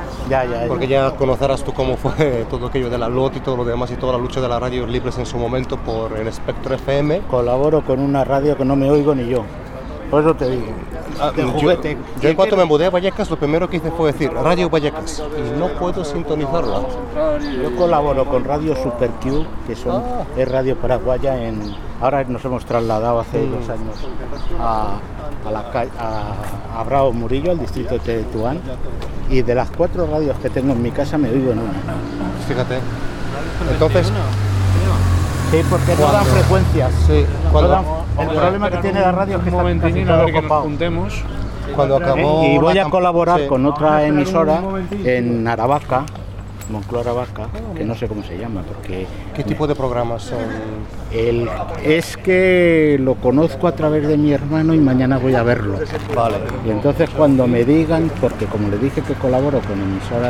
{"title": "Pacífico, Madrid, Madrid, Spain - Pacífico Puente Abierto - Transecto - 10 - Calle Cocheras. Hablando con Alejandro de radios y de fútbol", "date": "2016-04-07 20:00:00", "description": "Pacífico Puente Abierto - Calle Cocheras. Hablando con Alejandro de radios y de fútbol", "latitude": "40.40", "longitude": "-3.68", "altitude": "618", "timezone": "Europe/Madrid"}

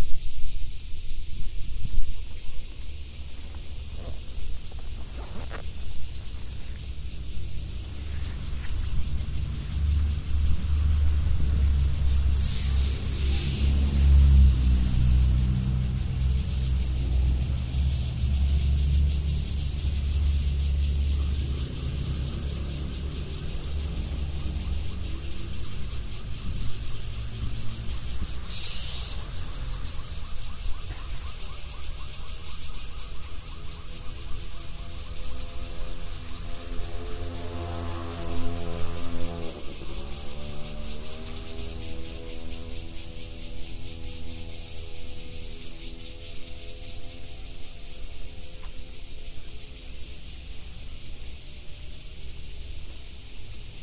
{"title": "25 July at Taipei National University of the Arts - Taipei National University of the Arts", "description": "record at 3pm, 25 July, 2008", "latitude": "25.13", "longitude": "121.47", "altitude": "76", "timezone": "GMT+1"}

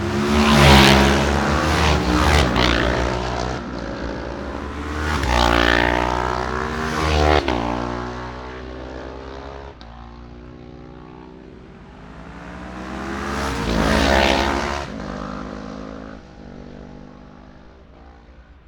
{"title": "Scarborough, UK - motorcycle road racing 2012 ...", "date": "2012-04-15 09:59:00", "description": "600-650cc twins practice ... Ian Watson Spring Cup ... Olivers Mount ... Scarborough ... binaural dummy head ... grey breezy day ...", "latitude": "54.27", "longitude": "-0.41", "altitude": "147", "timezone": "Europe/London"}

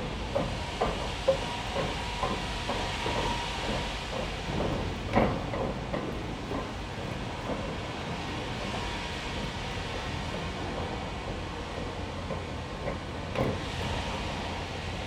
{"title": "London's loudest building site? Thames Embankment, London, UK - London's loudest building site from under the Millennium Bridge", "date": "2022-05-17 17:16:00", "description": "This site is being redeveloped and plans show that the new building will have a roof with gardens and walkways. Currently it is one of loudest building sites in town - constant pneumatic drills and whining machines easily audible on the opposite river bank and further. Amazing how much noise is created by 'caring constructors' for a 'green' development! In quieter moments it's possible to hear passing footsteps resonating in the metal of the millennium Bridge above.", "latitude": "51.51", "longitude": "-0.10", "altitude": "14", "timezone": "Europe/London"}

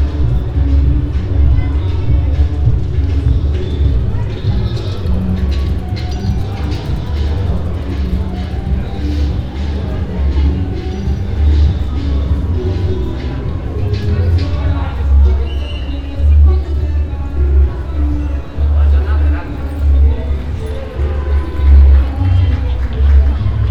{"title": "METS-Conservatorio Cuneo: 2019-2020 SME2 lesson1A - “Walking lesson SME2 in three steps: step A”: soundwalkMETS-Conservatorio Cuneo: 2019-2020 SME2 lesson1A “Walking lesson SME2 in three steps: st", "date": "2020-10-01 09:57:00", "description": "METS-Conservatorio Cuneo: 2019-2020 SME2 lesson1A\n“Walking lesson SME2 in three steps: step A”: soundwalk\nThursday, October 1st 2020. A three step soundwalk in the frame of a SME2 lesson of Conservatorio di musica di Cuneo – METS department.\nStep A: start at 09:57 a.m. end at 10:14, duration of recording 17’29”\nThe entire path is associated with a synchronized GPS track recorded in the (kmz, kml, gpx) files downloadable here:", "latitude": "44.39", "longitude": "7.54", "altitude": "539", "timezone": "Europe/Rome"}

